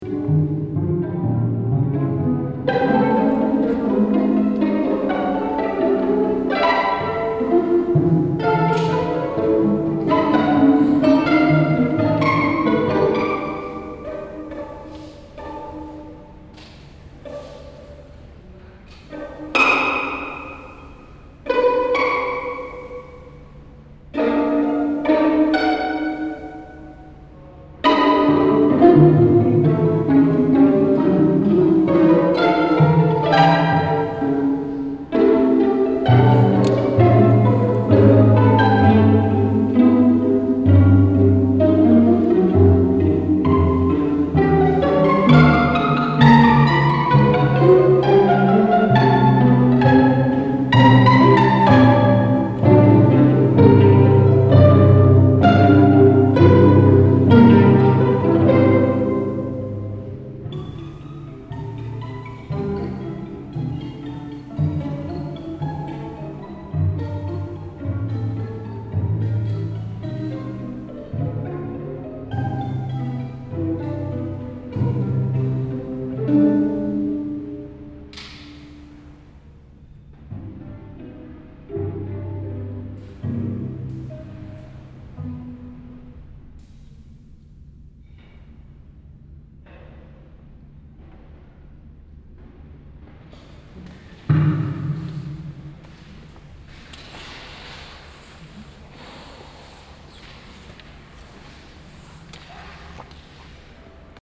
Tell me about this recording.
Inside the small newly renovated church. The sound of a string quartet, performing a composition by Bojan Vuletic during Asphalt Festival. soundmap nrw - social ambiences and topographic field recordings